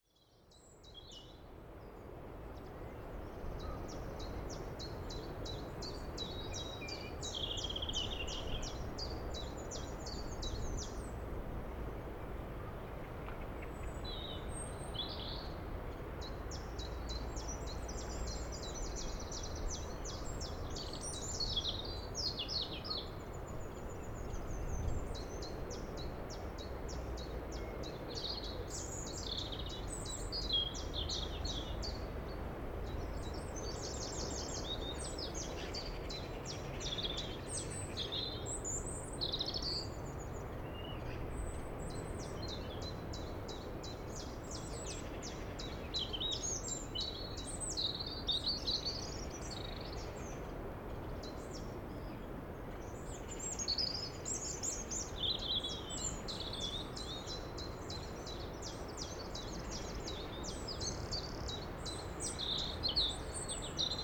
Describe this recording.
Des oiseaux et la mer entendu depuis l'autre versant de la presqu'île. Birds and the sea heard from the other side of the peninsula. April 2019.